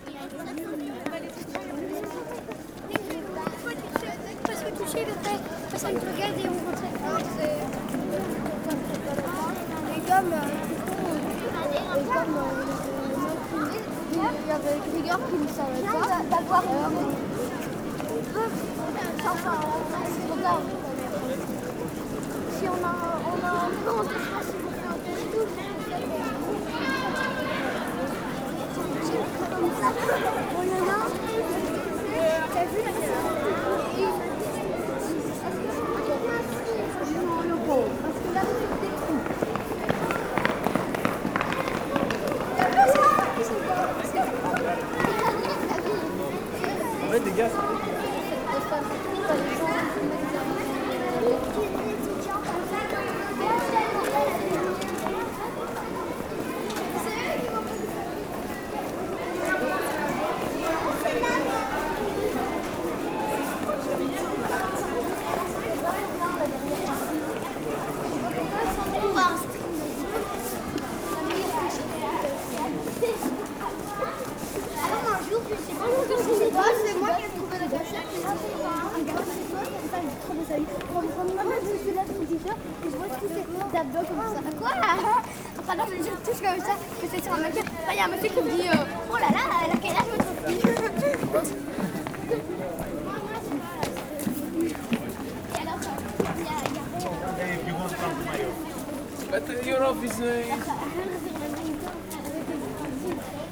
L'Hocaille, Ottignies-Louvain-la-Neuve, Belgique - Following children
Following children, crossing the city during the lunch time.
Ottignies-Louvain-la-Neuve, Belgium, 18 March 2016, ~13:00